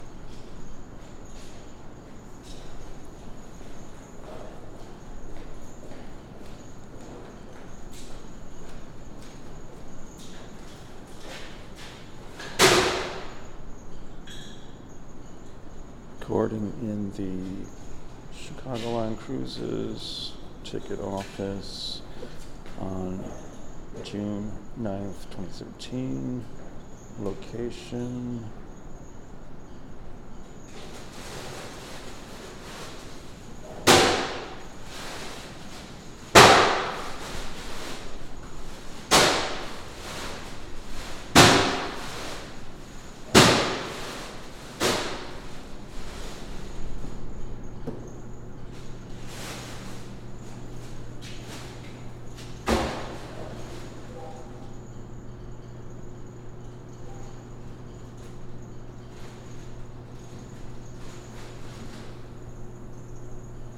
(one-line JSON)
{"title": "Chicago Line Cruises ticket office, Chicago, IL, USA - A Chicago Line Cruises ticket office", "date": "2017-06-09 07:52:00", "description": "7:52 AM Chicago Line Cruises employee enters empty ticket office and waiting room with large bags of ice, bangs then loosen cubes before pouring into the drinking water urns", "latitude": "41.89", "longitude": "-87.62", "altitude": "185", "timezone": "America/Chicago"}